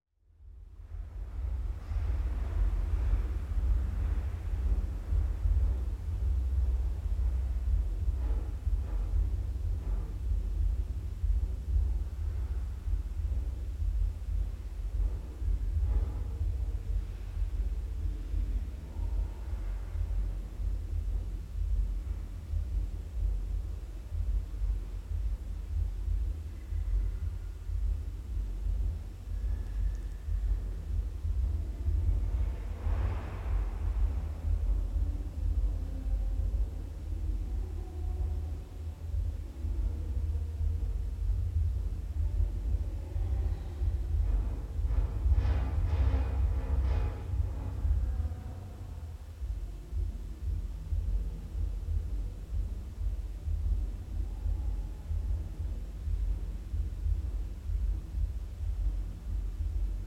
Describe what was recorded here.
The palace of students [Room 27], Dnipro, Ukraine